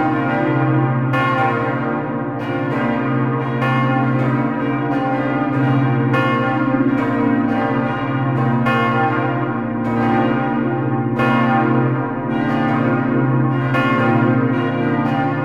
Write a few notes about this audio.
On the national day fest, ringing of all the small bells. The big bell Salvator, located on the north tower, doesn't ring at this moment. Recorded inside the tower. Thanks to Thibaut Boudart for precious help to record these bells. ~~~Before the bells ringing, there's the automatic hour chime.